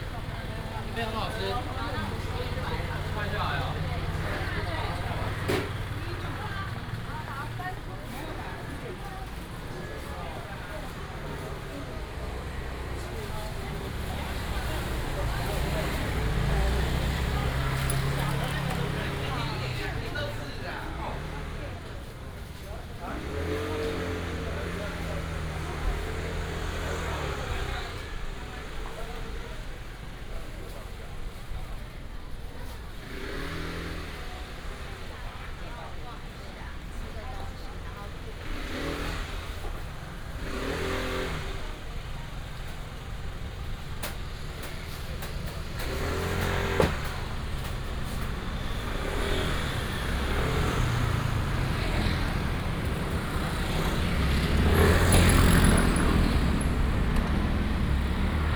西安街, East Dist., Hsinchu City - Walk through the market
Walk through the market, Traffic sound